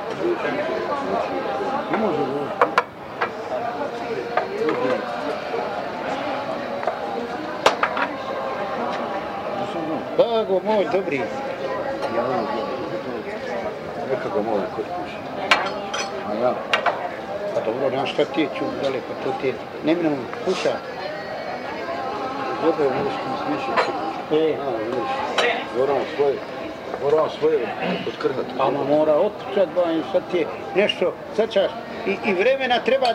Baščaršija, Sarajevo, Bosnia and Herzegovina - Sarajevo street
September 1996 - Bosnia after war. Recorded on a compact cassette and a big tape recorder !
In the center of Sarajevo and near the Baščaršija, people are happy. Everybody is in streets, drinking mint tea and discussing.
10 September